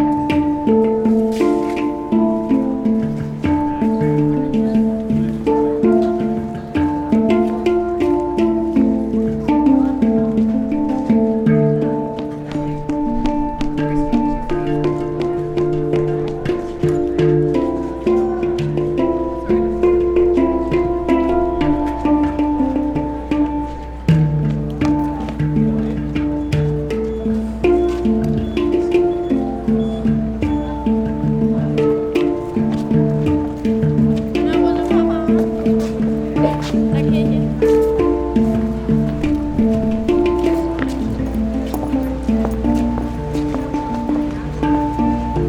Murano, Venezia, Italie - Hang Drum in Murano
A man playing Hang Drum in Murano, Zoom H6